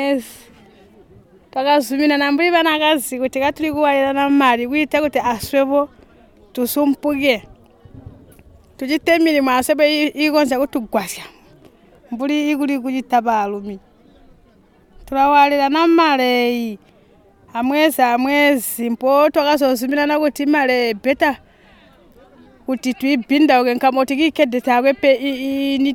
Tema Munkuli is the Secretary of Simatelele Women’s Forum. Tema talks about the duties of the Forum’s 25 members and how they are organising themselves. Among the benefits for the women she mentions that the women are able to afford the school fees for their children and buying livestock such as goats.
a recording by Ottilia Tshuma, Zubo's CBF at Simatelele; from the radio project "Women documenting women stories" with Zubo Trust, a women’s organization in Binga Zimbabwe bringing women together for self-empowerment.
19 July, 11:00